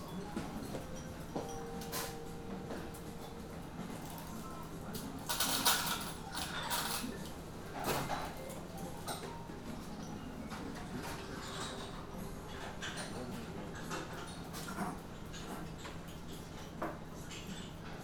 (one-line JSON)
{"title": "Tokyo, Taitō district, Ootoya restaurant - at the table", "date": "2013-03-28 20:28:00", "description": "one of my favorite places sound-wise from my trip to Japan. Waiting for my dinner at Ootoya restaurant. Jazz music (played in many restaurants in Japan, even the really cheap ones, from what i have noticed), rattle from the kitchen, hushed conversations, waitresses talking to customers and serving food, customers walking in and out, a man eating his food loudly - slurping and grunting.", "latitude": "35.71", "longitude": "139.78", "altitude": "13", "timezone": "GMT+1"}